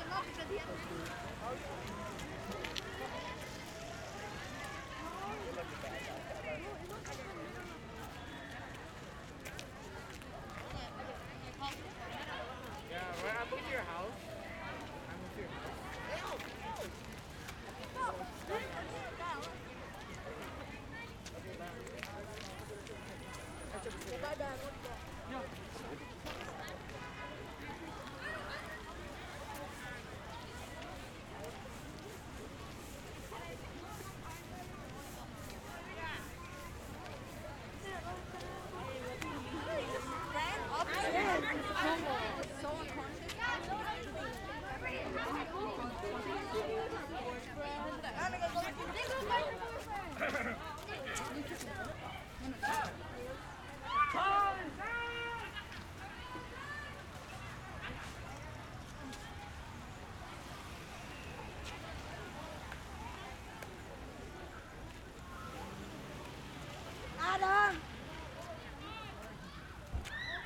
Madison St/Fairview Av, Queens, NY, USA - Ridgewood students return home
Ridgewood students return home and walk through snow and little puddles of water at Rosemary Park.